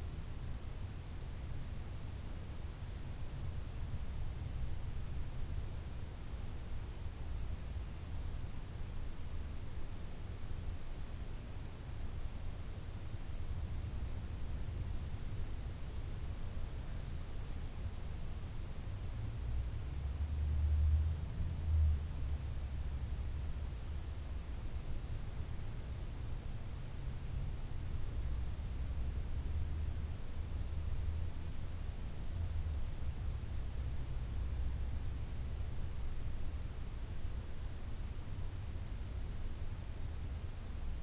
Sankt Peter-Ording, Germany - a windy silence in a church/ stille u wind in einer dorfkirche
about two minutes of the inner space of the small church of saint peter, in st. peter ording; ca. zwei minuten stille, bei gleichzeitigem wind in der kirche st. peter in st. peter ording / ca. due minuti di silenzio dalla chiesa di san pietro di st peter ording, con qualche macchia del vento fuori dalla chiesa